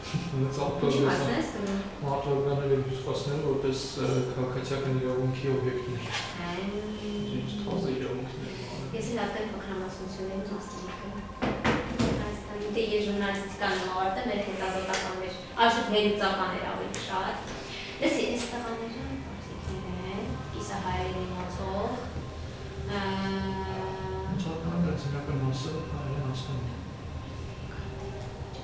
Yerevan, Arménie - Hotel receptionist
The One Way hostel is a cheap and extremely friendly hostel, in the center of Erevan called Kentron, only five minutes to walk to the Republic square. It’s good for backpackers. During this late evening, a concert is occurring on Charles Aznavour square. The friendly receptionist is explaining the day to the substitute doing the night.